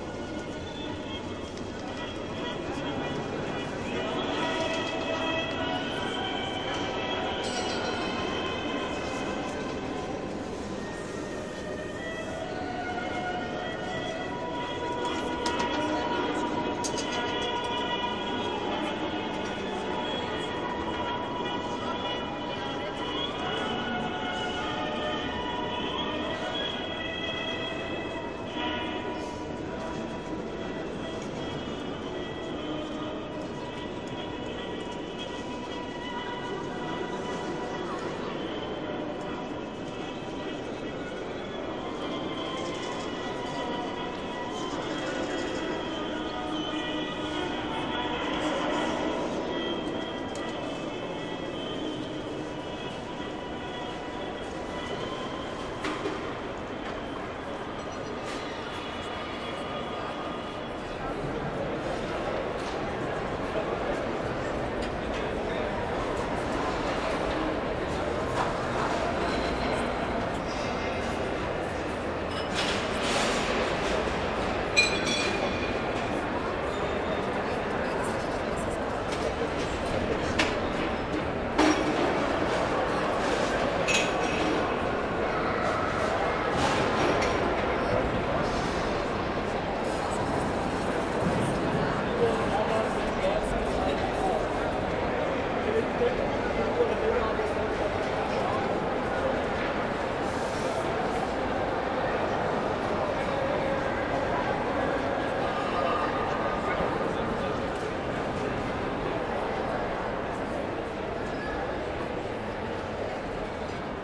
Markthalle Basel, Basel, Schweiz - alte Markthalle
Scenery in the alte Markthalle with its huge dome of 26 meters height which reflects with great echo all the noise produced by people eating & drinking, children playing and marquees preparing fresh food.
Zoom H6, MS Microphone
Basel, Switzerland, 10 February 2018